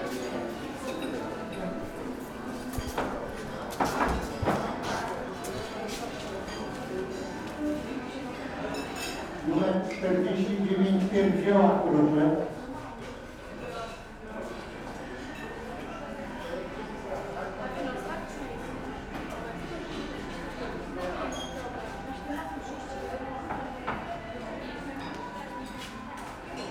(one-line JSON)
{"title": "Lubiatowo, Wiktoria holiday resort - canteen", "date": "2015-08-16 16:21:00", "description": "at a resort canteen. lots of guests having late dinner/early supper. the place was rather busy. order number and dish name are announced through crappy pa system. the owner and the girl at the counter talk to a microphone which is attached to a wall with duck tape.", "latitude": "54.81", "longitude": "17.83", "altitude": "14", "timezone": "Europe/Warsaw"}